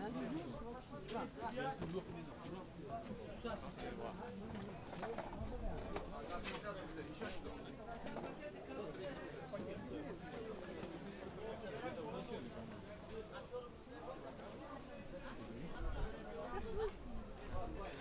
Sennoy market, Saint-Petersburg, Russia - Sennoy market
SPb Sound Map project
Recording from SPb Sound Museum collection
Sankt-Peterburg, Russia, March 2015